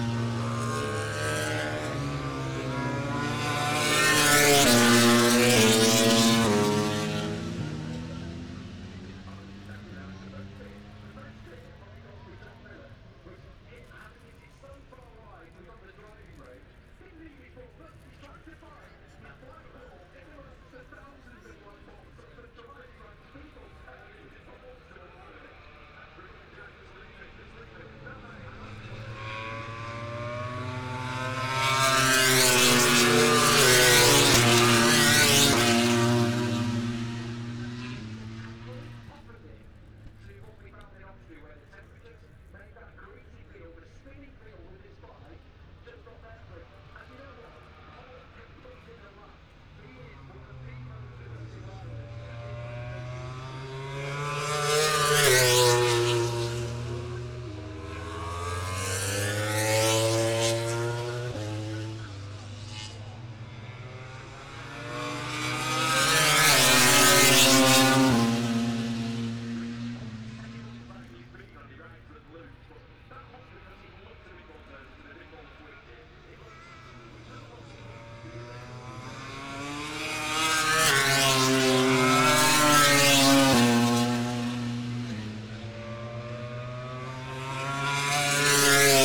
Silverstone Circuit, Towcester, UK - british motorcycle grand prix ... 2021
moto grand prix ... free practice three ... copse corner ... dpa 4060s to MixPre3 ...
England, United Kingdom